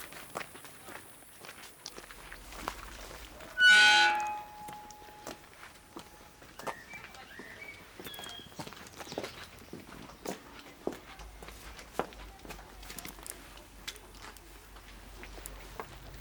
walking towards and entering a small church where the "Bascanska ploca" was discovered(a pluteus with old slavic characters chiselled out in stone, crucial document of Croatian history);since 1100 till 1850 it lay forgotten on the floor;in the decade of strong patriotic pathos (1990-ties) the church was full of moist, scorpions could be seen around; commentaries of 2 women who opened the church for us are related to that subject